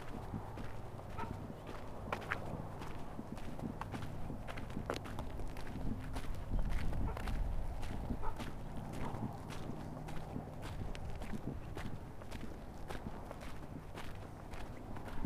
the horn is heard! goodbye! see you!
Glorieta, NM, USA, 28 June 2018